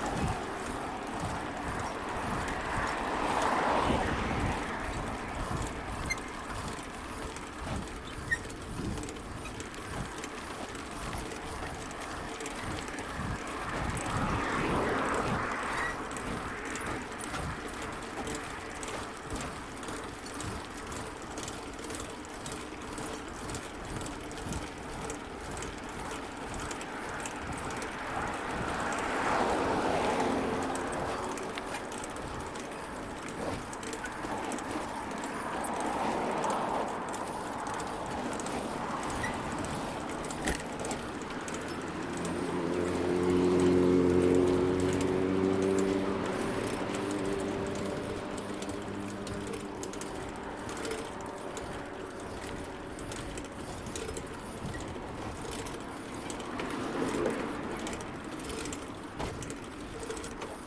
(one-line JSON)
{"title": "Upper Mount Royal, Calgary, AB, Canada - Riding My Rusty Bike To the Store and Back", "date": "2014-04-07 11:47:00", "description": "For this recording, I mounted an H4N onto my bike and pressed the red button. This recording was part of the Sonic Terrain World Listening Day 2014 Compilation [STR 015].", "latitude": "51.03", "longitude": "-114.10", "altitude": "1092", "timezone": "America/Edmonton"}